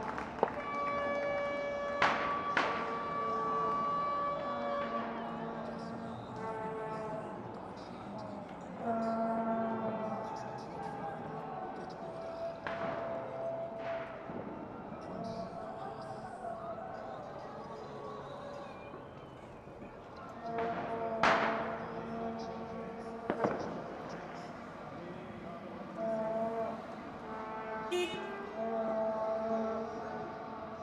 friedelstraße: hobrechtbrücke - public viewing
WM world championship 2010. people watching tv in the streets. germany wins 4:1 over england.
Berlin, Germany